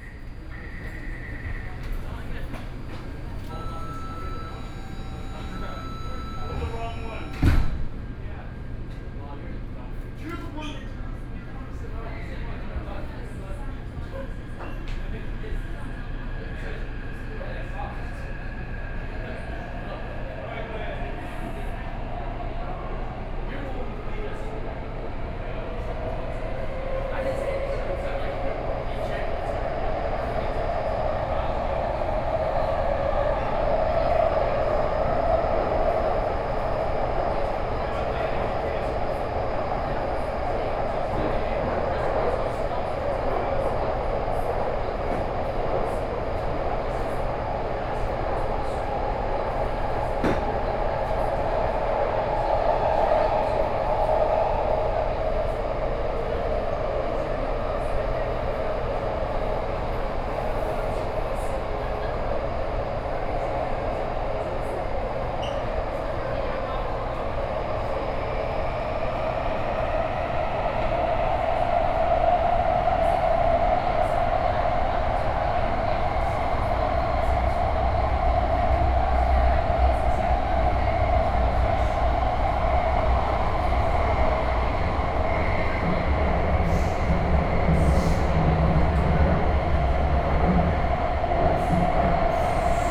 Sanchong District, New Taipei City - Xinzhuang Line (Taipei Metro)
from Sanchong Station to Touqianzhuang Station, Sony PCM D50 + Soundman OKM II
16 August 2013, 16:30